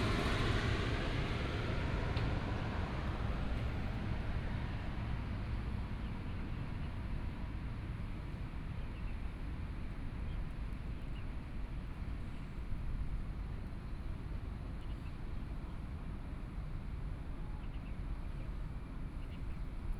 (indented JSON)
{
  "title": "Arts Park - Taipei EXPO Park - Aircraft flying through",
  "date": "2013-10-09 13:16:00",
  "description": "Aircraft flying through, Sony PCM D50 + Soundman OKM II",
  "latitude": "25.07",
  "longitude": "121.52",
  "altitude": "5",
  "timezone": "Asia/Taipei"
}